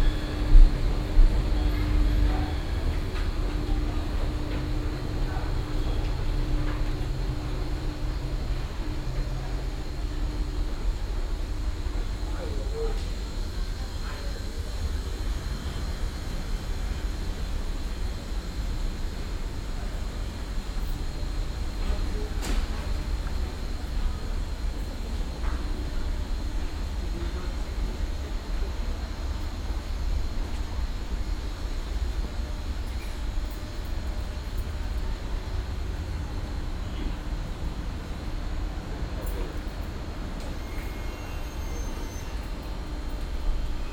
cologne, ebertplatz, ubahnstsation, fahrt zum hbf - koeln, nord, ebertplatz, ubahnstation, abends
soundmap: köln/ nrw
gang von eingang platz über rolltreppe zu bahngleis der u-bahnstation - bis einfahrt bahn, abends
project: social ambiences/ listen to the people - in & outdoor nearfield recordings